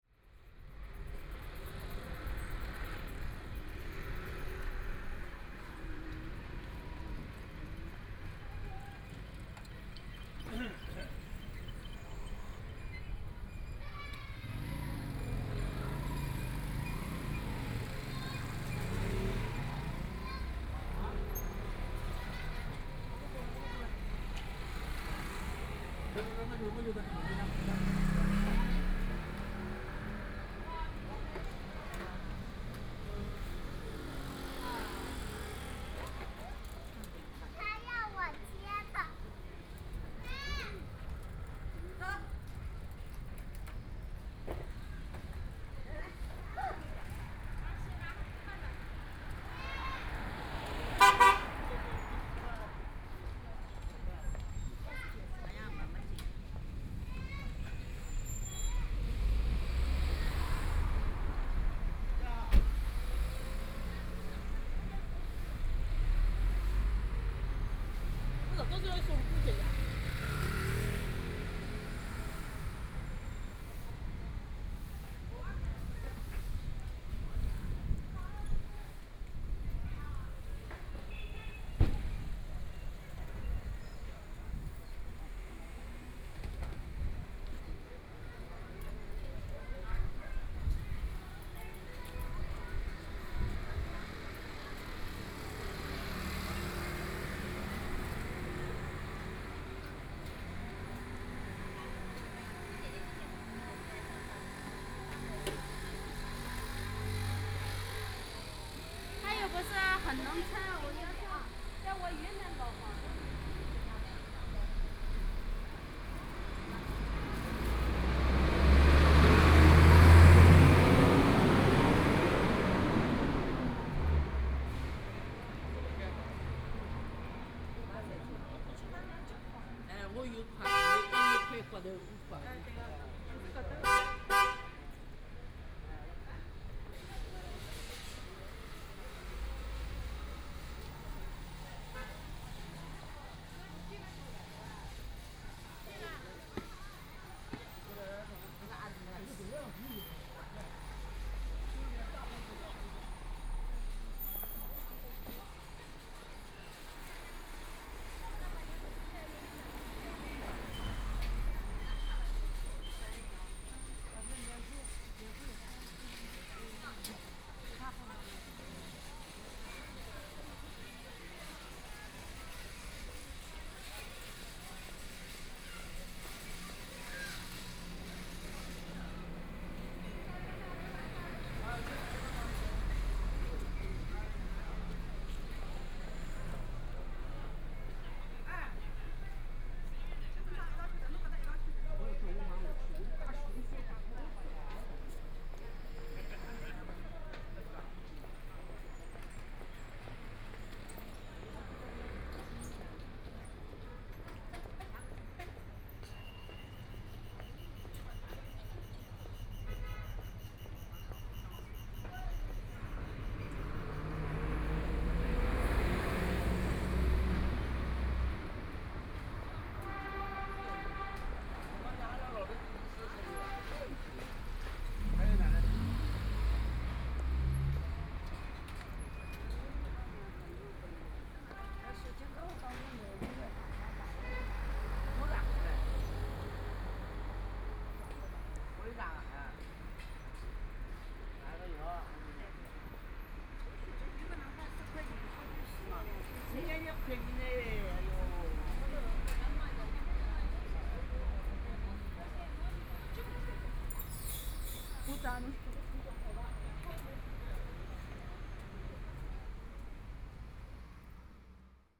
Walking through the old neighborhoods, Walking on the street, About to be completely demolished the old community, Binaural recordings, Zoom H6+ Soundman OKM II
Huangpu, Shanghai, China, 1 December, 12:41